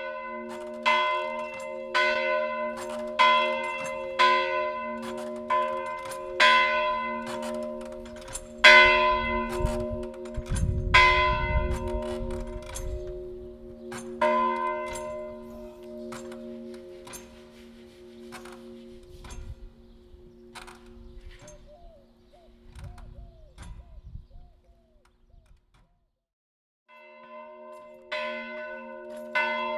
Carreira de S.Francisco 7O5O-16O Montemor-o-Novo - Campanário
Montemor-o-Novo, Portugal, June 2014